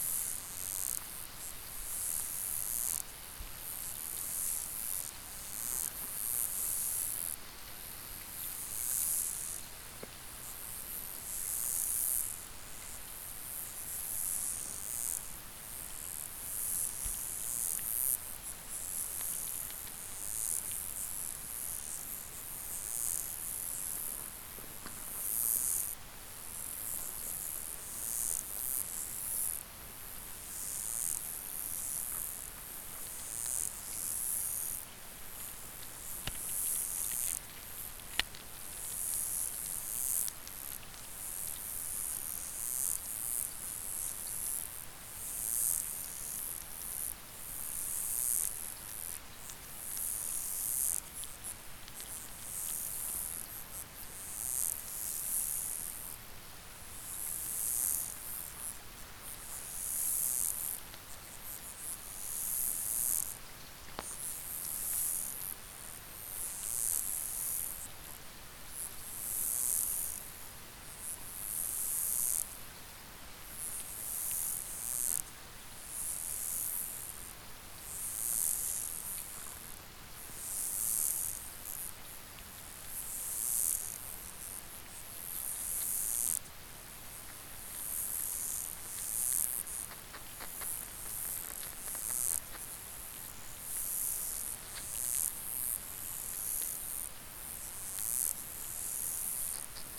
{"title": "River Drava, Maribor, Slovenia - bridge fountain from underwater", "date": "2012-06-14 22:05:00", "description": "hydrophone recording of underwater life in the river drava, accompanied by fountains that spray water into the river from the old bridge.", "latitude": "46.56", "longitude": "15.65", "altitude": "261", "timezone": "Europe/Ljubljana"}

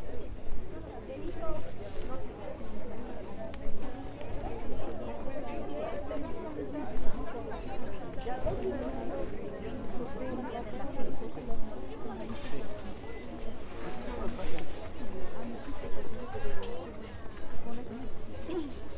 {"title": "Garita Lima, La Paz - Garita Llima", "date": "2012-01-09 08:22:00", "description": "por Fernando Hidalgo", "latitude": "-16.50", "longitude": "-68.15", "altitude": "3730", "timezone": "America/La_Paz"}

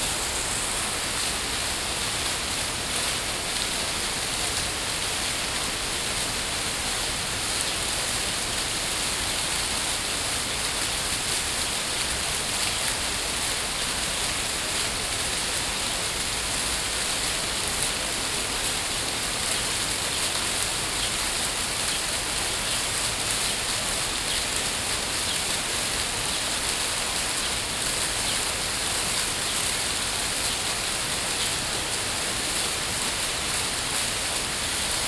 {"title": "Parque de la Ciudadela, Passeig de Picasso, Barcelona, Barcelona, España - Parc de la Ciutadella Fountain Cascade", "date": "2015-07-18 12:51:00", "description": "Water recording made during World Listening Day.", "latitude": "41.39", "longitude": "2.19", "altitude": "11", "timezone": "Europe/Madrid"}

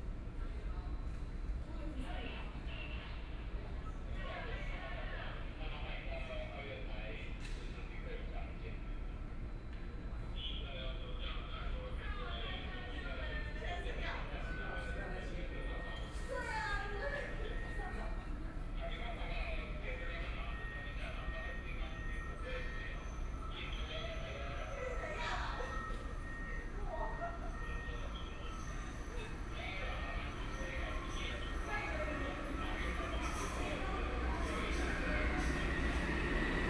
{"title": "Fuxinggang Station, Beitou District - station platform", "date": "2014-07-24 14:56:00", "description": "In the MRT station platform, Waiting for the train\nBinaural recordings, ( Proposal to turn up the volume )\nSony PCM D50+ Soundman OKM II", "latitude": "25.14", "longitude": "121.49", "altitude": "10", "timezone": "Asia/Taipei"}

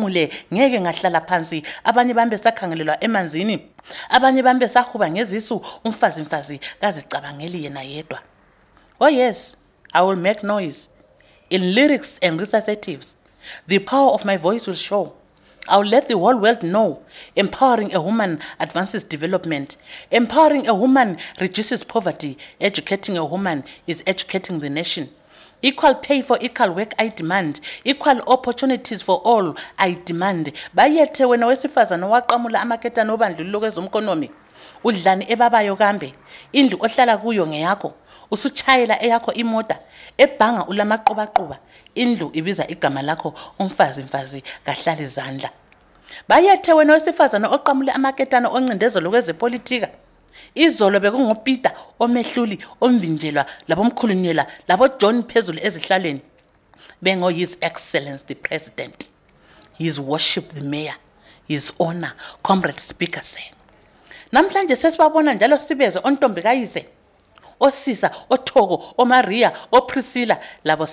{"title": "National Gallery, Bulawayo, Zimbabwe - Star celebrates women power and women’s empowerment…", "date": "2012-10-27 15:43:00", "description": "We were making this recording of a poem in Ndebele in Sithandazile’s studio at the National Gallery of Zimbabwe in Bulawayo just above the courtyard café, the doors to the balcony are open...\nSithandazile Dube is performance poet.", "latitude": "-20.15", "longitude": "28.58", "altitude": "1351", "timezone": "Africa/Harare"}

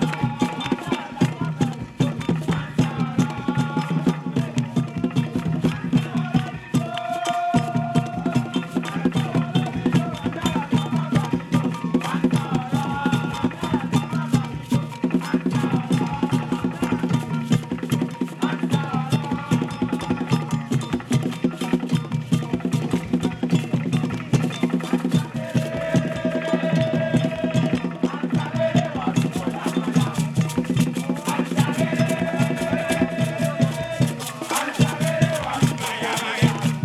{"title": "Stadium St, Ho, Ghana - The big annual Framers Festival 2004 - feat. Kekele Dance Group", "date": "2004-04-14 20:15:00", "description": "the grand finale of the farmers festival with drinks and snacks for everyone and a performance of the wonderfull Kekele Dance Group", "latitude": "6.60", "longitude": "0.47", "altitude": "170", "timezone": "Africa/Accra"}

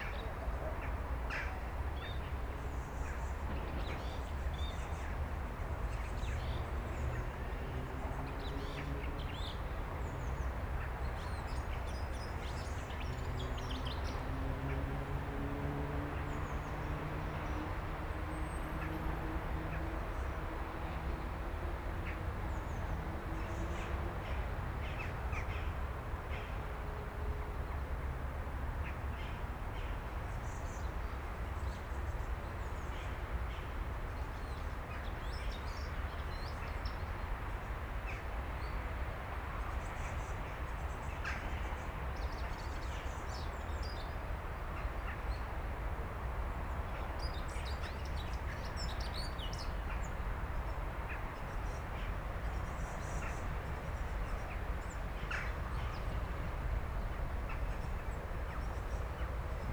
{"title": "Piłsudskiego, Skwer za Planetarium - Stara Łyna", "date": "2014-06-03 16:38:00", "description": "Recorded during audio art workshops \"Ucho Miasto\" (\"Ear City\"):", "latitude": "53.77", "longitude": "20.49", "altitude": "140", "timezone": "Europe/Warsaw"}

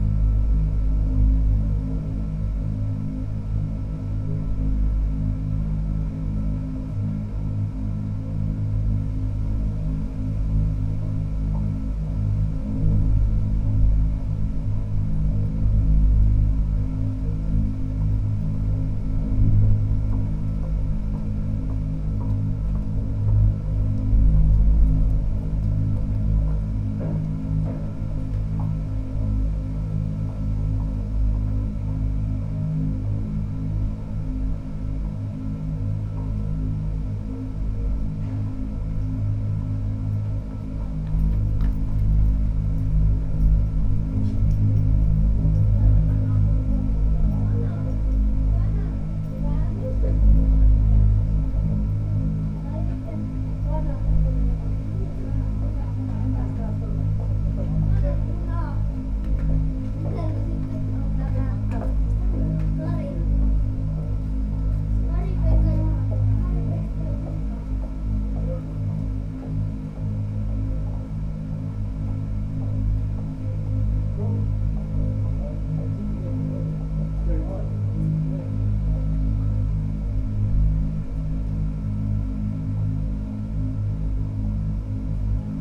{"title": "Tallinn, Pikk jalg, drain - street sound in drain", "date": "2011-07-06 16:25:00", "description": "sound of pikk jalg street, recorded in resonating rain drain", "latitude": "59.44", "longitude": "24.74", "altitude": "47", "timezone": "Europe/Tallinn"}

